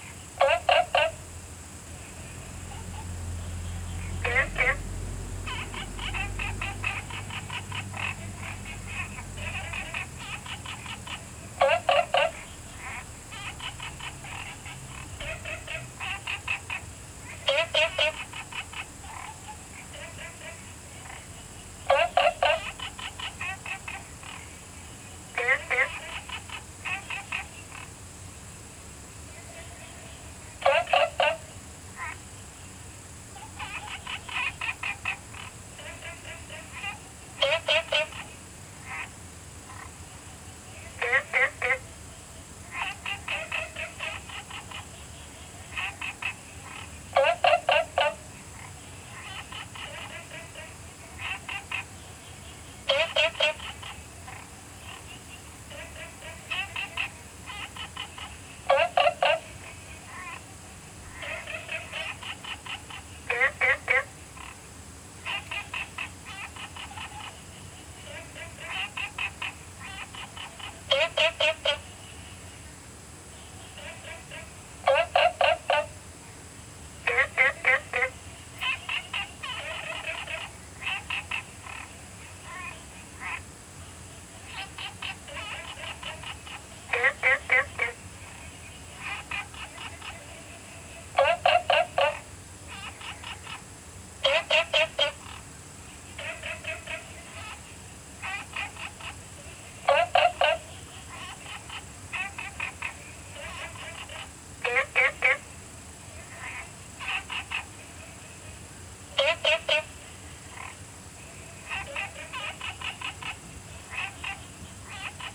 Frogs chirping, Small ecological pool
Zoom H2n MS+XY
Puli Township, 桃米巷11-3號